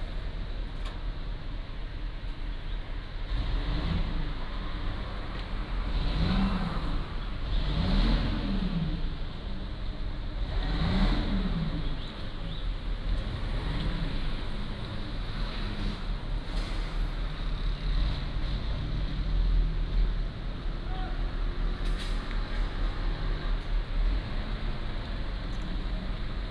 桃米里, Puli Township - At the junction
At the junction, Traffic Sound, Birdsong
Nantou County, Puli Township, 桃米巷, 29 April